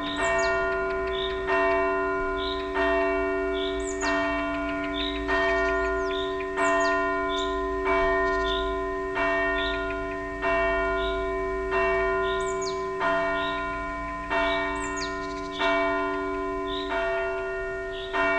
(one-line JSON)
{"title": "Kelmė, Lithuania, funeral bells", "date": "2019-06-12 12:05:00", "description": "at the church. bells tolling for the deceased one", "latitude": "55.63", "longitude": "22.93", "altitude": "129", "timezone": "Europe/Vilnius"}